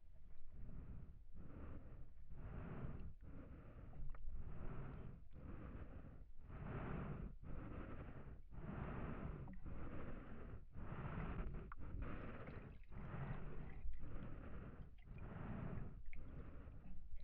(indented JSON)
{
  "title": "Suezkade, Den Haag - hydrophone rec at a floating dock",
  "date": "2009-04-22 15:40:00",
  "description": "Mic/Recorder: Aquarian H2A / Fostex FR-2LE",
  "latitude": "52.08",
  "longitude": "4.29",
  "altitude": "5",
  "timezone": "Europe/Berlin"
}